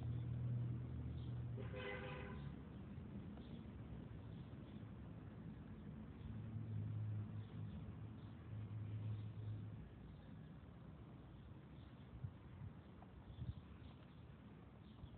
Godoy Cruz, Mendoza, Argentina - Barrio y pajaritos.
Entre arboles, pajaros y animalias se funden en el fondo con el ruido de la ciudad.
7 July